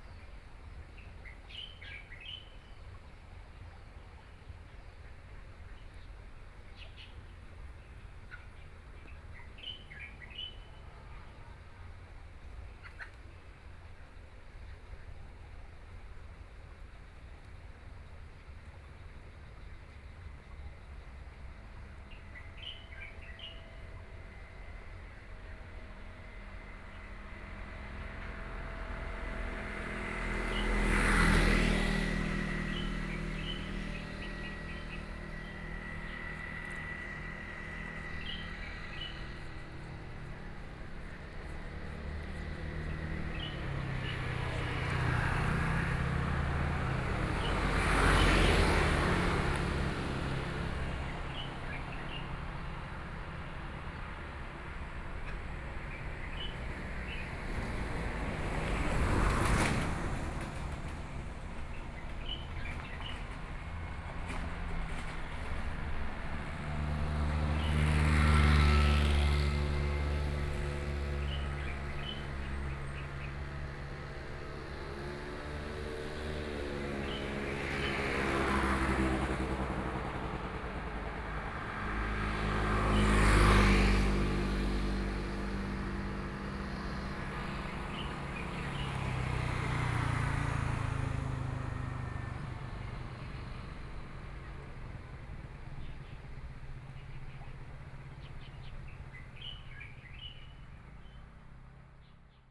Small village, In the tree, Birdsong, Crowing sound, Traffic Sound
4 September 2014, ~18:00